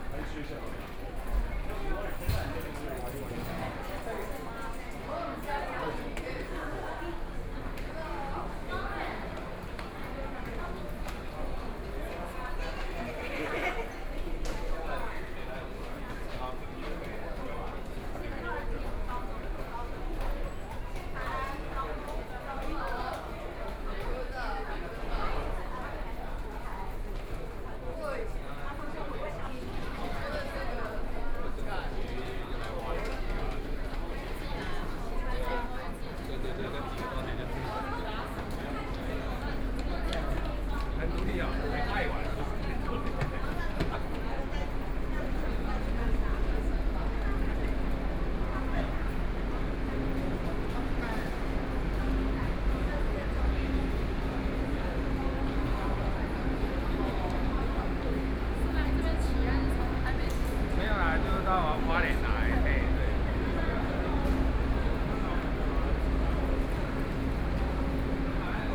Walked through the underpass from the station platform to the station exit, Binaural recordings, Zoom H4n+ Soundman OKM II
Hualien County, Taiwan, 5 November 2013, 11:15